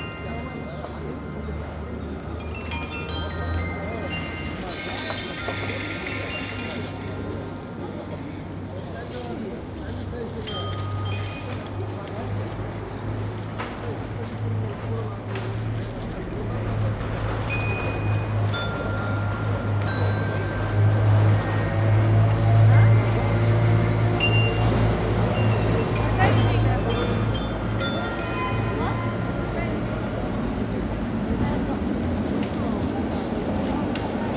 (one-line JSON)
{"title": "Kortenbos, Den Haag, Nederland - Kids on the Dance Chimes", "date": "2015-07-01 16:45:00", "description": "Kids playing on the Dance Chimes in front the ice-cream parlor Florencia in Den Haag. And of course a lot of traffic, people and some pigeons.", "latitude": "52.08", "longitude": "4.30", "altitude": "7", "timezone": "Europe/Amsterdam"}